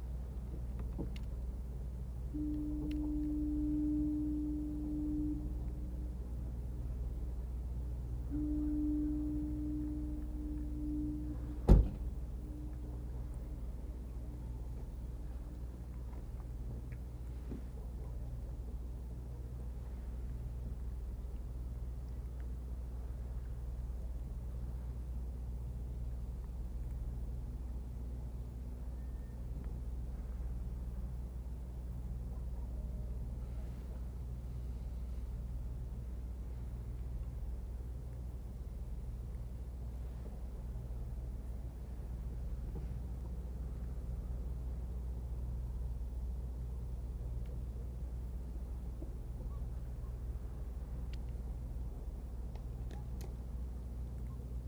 Freeport, NS, Canada - Departing ferry, 2 crows and the emerging atmosphere
The Freeport Ferry fires up and slowly chugs into the distance. Crows caw. A distant shipping horn sounds. Very little appears to happen in the quiet empty atmosphere. The scene is very filmic. We are waiting for something, a significant event, probably dramatic and not very pleasant. But what? Well no such thing occurs today. We drive away.
12 October 2015, 15:57